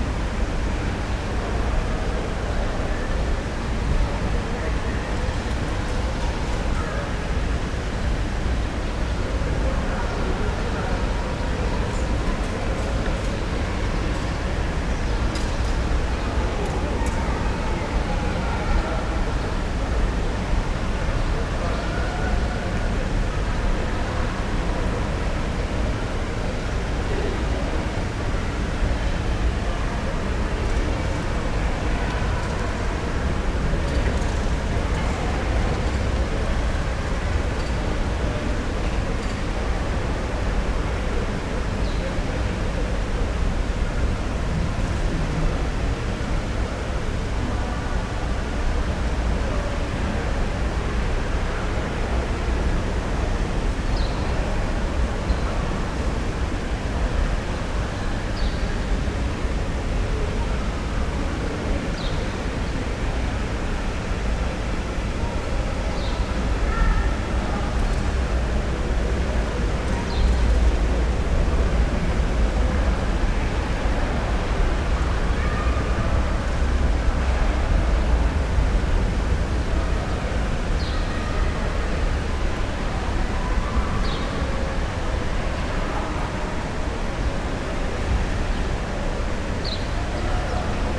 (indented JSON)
{
  "title": "Atocha station in Madrid Spain",
  "date": "2008-10-29 00:04:00",
  "description": "Atocha train station, the site of the bombings in 2004 in Madrid Spain",
  "latitude": "40.41",
  "longitude": "-3.69",
  "altitude": "633",
  "timezone": "Europe/Berlin"
}